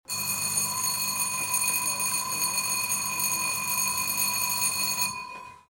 Couloir de la SEGPA, collège de Saint-Estève, Pyrénées-Orientales, France - Sonnerie de l'intercours
Preneuse de son : Aurélie
17 March